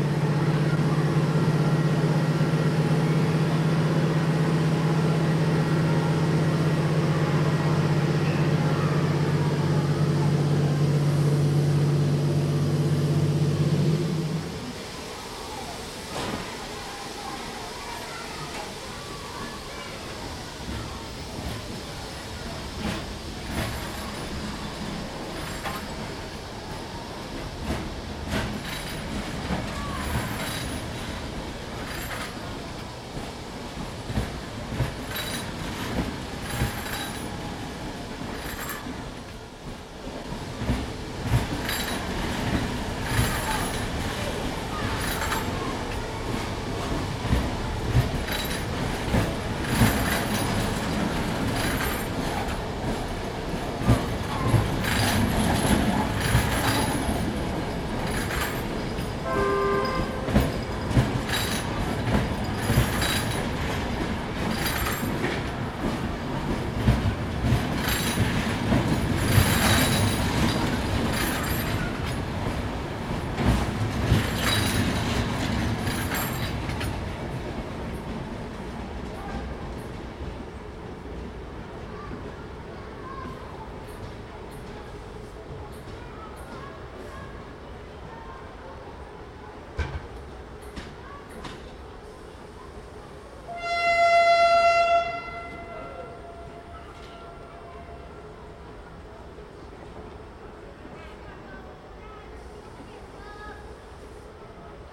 Having accompanied a friend to the train, I did a short recording with a Zoom H2n in surround mode as lots was happening: a large group of people with kids were waiting for a different train, a local one going to villages around the city. Kids were shouting and throwing firecrackers at each other. Once their train arrives, they climb in and the soundscape gives in to the more mechanical noises of the trains and signals (departing carriages, a locomotive passing by etc.)